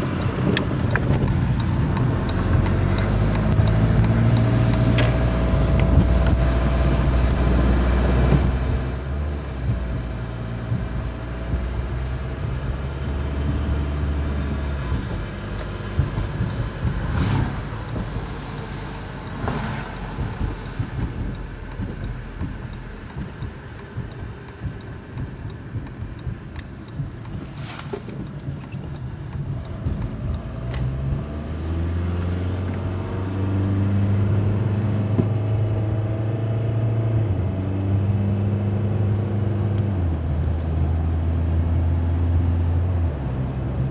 Gas Station, Waupun, WI, USA - Gas station Waupun Wisconsin and drive off into ice storm
Had to go inside for a receipt for diesel. Music blaring at pump and in the store. Lots of road noise and ice pellets on windshield sounds like static.